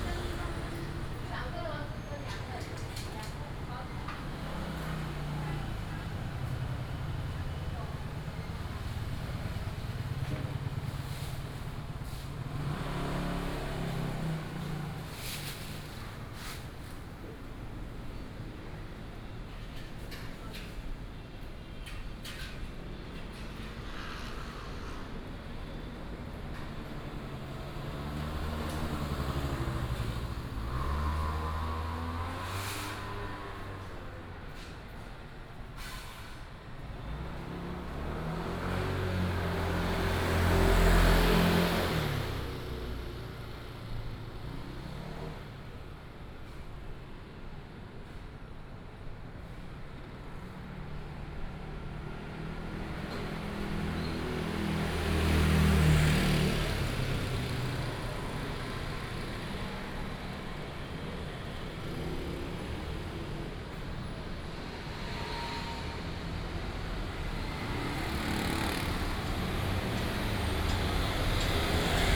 Gongkou St., Banqiao Dist. - Small alley
Walking through the market, Small alley
Please turn up the volume a little. Binaural recordings, Sony PCM D100+ Soundman OKM II